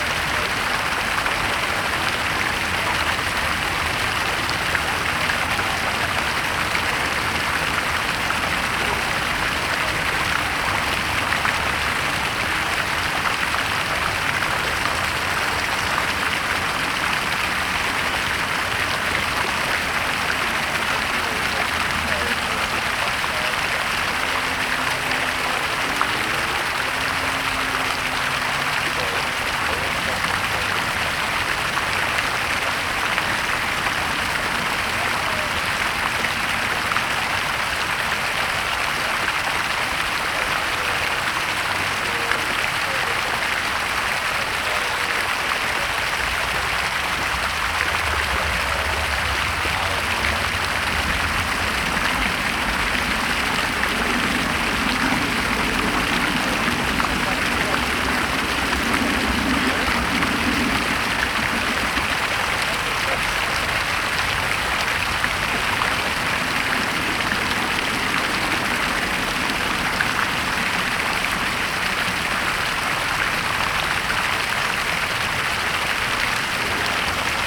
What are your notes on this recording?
Fontanna Mariensztacka, Ulica Marjensztat, Garbarska, Warszawa